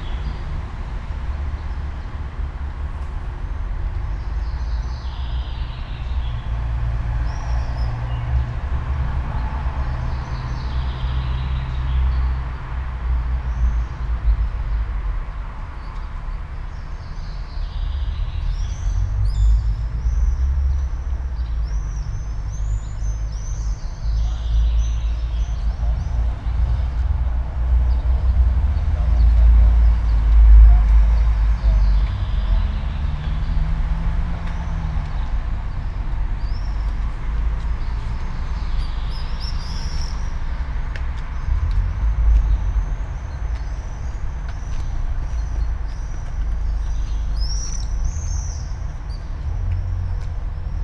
all the mornings of the ... - jul 5 2013 friday 07:09
5 July 2013, 07:09